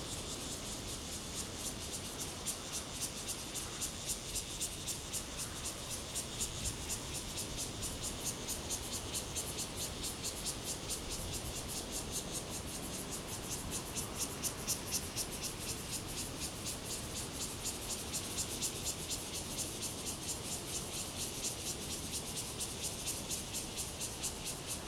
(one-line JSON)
{"title": "樟原橋, Taitung County - Cicadas sound", "date": "2014-11-08 10:17:00", "description": "Cicadas sound, Traffic Sound\nZoom H2n MS+XY", "latitude": "23.40", "longitude": "121.48", "altitude": "26", "timezone": "Asia/Taipei"}